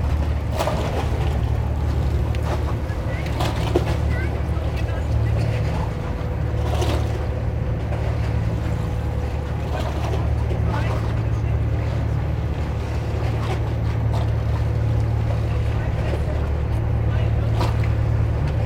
Hamburg, Deutschland - Pontoon and tourist boat
On the pontoon. Landungsbrücken near the Elbphilharmonie. Some tourist boats berthing. At the backyard, sounds of the Hamburg harbor.
Platz der Deutschen Einheit, Hamburg, Germany, 19 April 2019